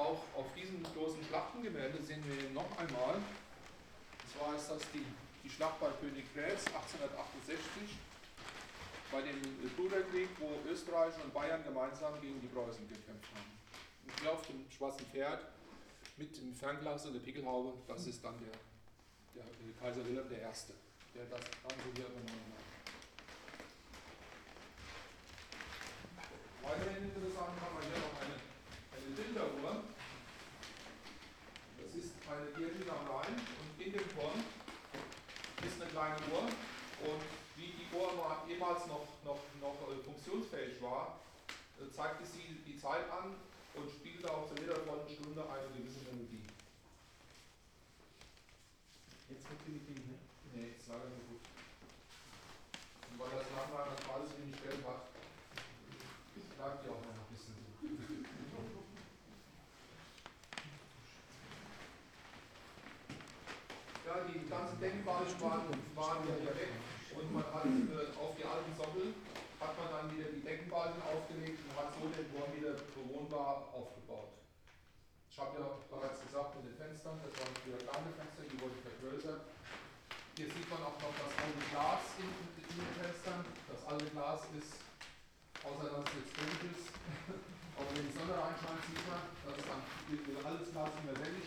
guided tour through sooneck castle (2), entrance hall & different rooms, guide continues the tour, visitors follow him with overshoes
the city, the country & me: october 17, 2010